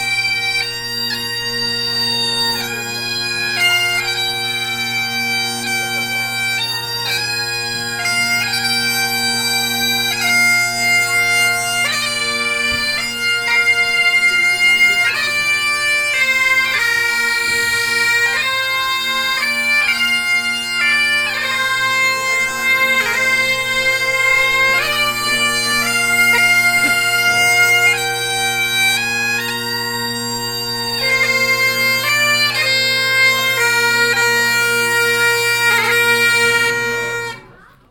vianden, castle, pipe organ corp
On the castle terrace. A pipe organ group from the Czech Republic playing during the annual medieval castle festival.
Vianden, Schloss, Dudelsackgruppe
Auf der Schlossterrasse. Eine Dudelsackgruppe aus der Tschechischen Republik spielt während des jährlichen Mittelalterfestes.
Vianden, château, groupe de joueurs de cornemuse
Sur la terrasse du château. Un groupe de joueurs de cornemuse tchèques joue pendant le festival médiéval annuel au château.
Project - Klangraum Our - topographic field recordings, sound objects and social ambiences
Vianden, Luxembourg, 12 August 2011